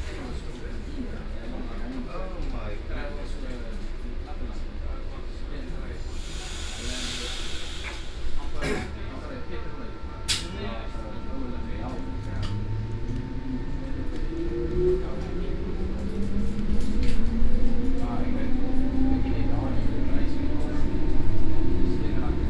tunneltje station de Vink
station de Vink, aankomst sprinter
trainstation de Vink, arrival stoptrain
Voorschoten, The Netherlands, 8 September 2011, 14:00